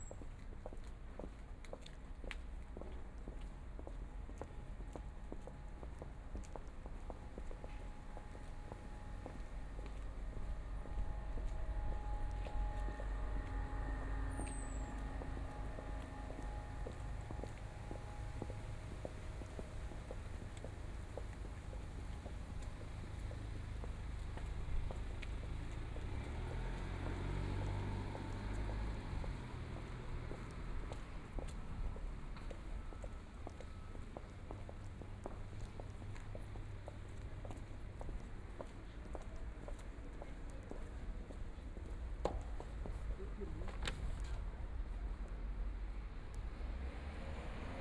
Schonfeldstraße, Maxvorstadt, Munich - walking in the Street
walking in the Street, Police car, Traffic Sound, Footsteps